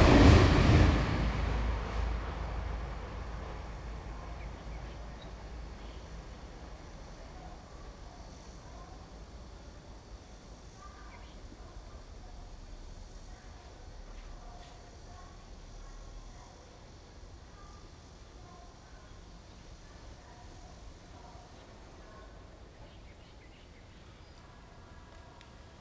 Dachang Rd., Pingzhen Dist. - Under the railroad tracks
Under the railroad tracks, The train runs through, traffic sound, Dog and bird sounds, Cicada cry
Zoom h2n MS+XY
Taoyuan City, Taiwan, 4 August 2017